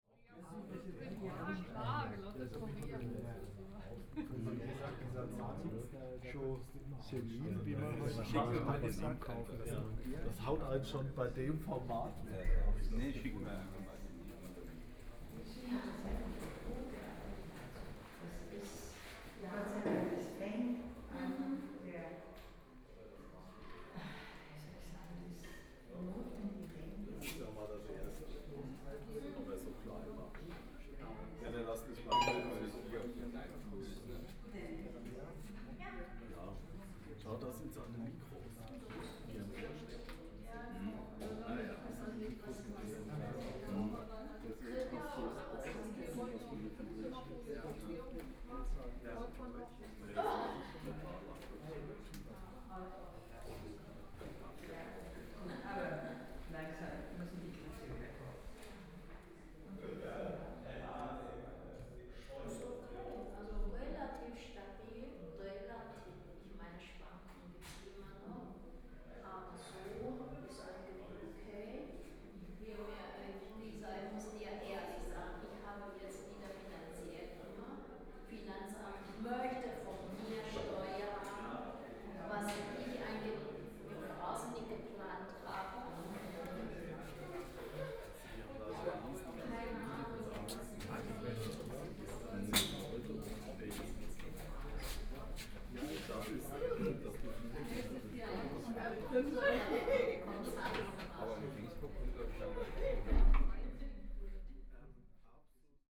Apartment der Kunst, Munich - In the gallery
Walking in and outside the gallery space, Openning